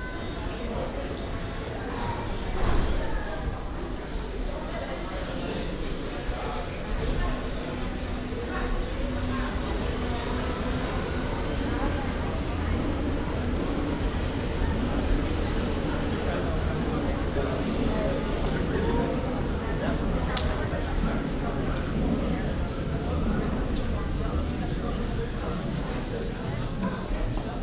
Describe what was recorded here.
The whole U-Bahn trip from Rathaus Neuköln to Alexanderplatz. Binaural recording.